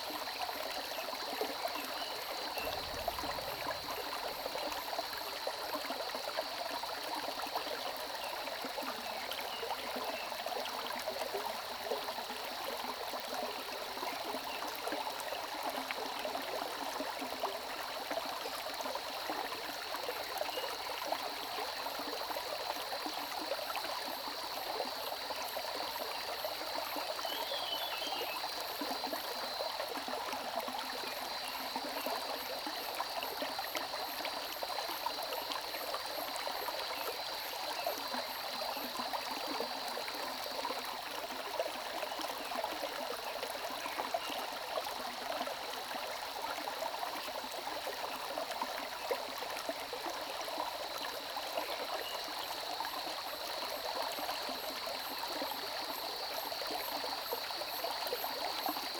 中路坑溪, 埔里鎮桃米里 - Stream
Streams and birdsong, The sound of water streams
Zoom H2n MS+XY
Nantou County, Taiwan